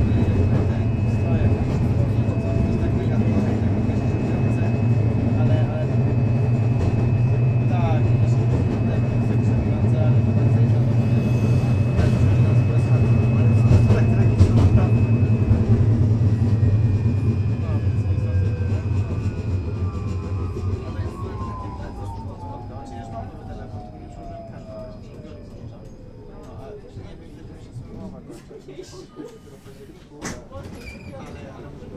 near Skytower, Wroclaw, Polen - Two Tramstops
Two tramstops direction downtown in beautiful Wroclaw, lat at night; machine & human voices talking on mobiles & chatting make the densest of timbre folds. "H2"